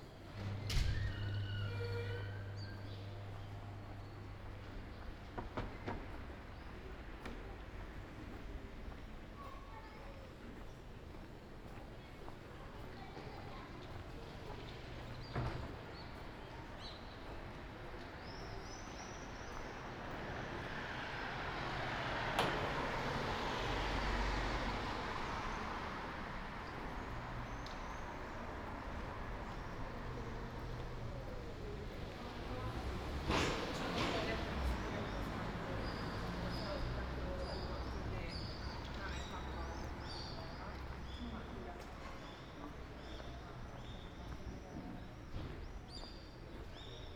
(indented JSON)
{
  "title": "Ascolto il tuo cuore, città. I listen to your heart, city. Several chapters **SCROLL DOWN FOR ALL RECORDINGS** - “Posting postcards, day 1 of phase 2, at the time of covid19” Soundwalk",
  "date": "2020-05-04 20:14:00",
  "description": "“Posting postcards, day 1 of phase 2, at the time of covid19” Soundwalk\nChapter LXVI of Ascolto il tuo cuore, città. I listen to your heart, city.\nMonday May 4th 2020. Walking to mailbox to post postcard, San Salvario district, fifty five days (but first day of Phase 2) of emergency disposition due to the epidemic of COVID19\nStart at 8:14 p.m. end at 8:34 A.m. duration of recording 20’39”\nThe entire path is associated with a synchronized GPS track recorded in the (kml, gpx, kmz) files downloadable here:",
  "latitude": "45.06",
  "longitude": "7.68",
  "altitude": "243",
  "timezone": "Europe/Rome"
}